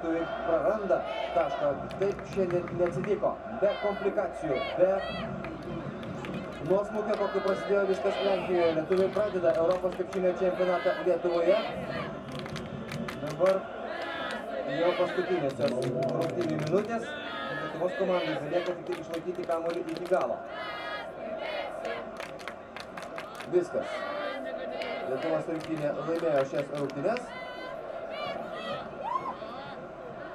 Lithuania, Utena, basketball on the big sreen
the firs lithuanian religion: basketball. European championship 2011: Lithuania - UK. This was shown on the big screen, outside. The closing minutes of the game.